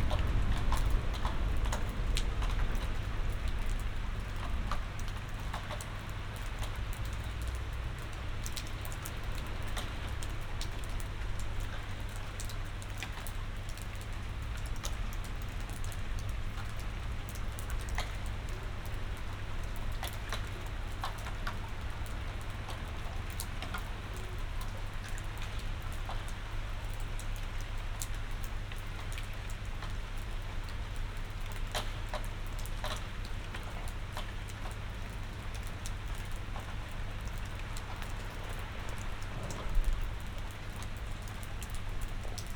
the city, the country & me: july 17, 2012
99 facets of rain
Berlin, Germany, 17 July 2012, 02:04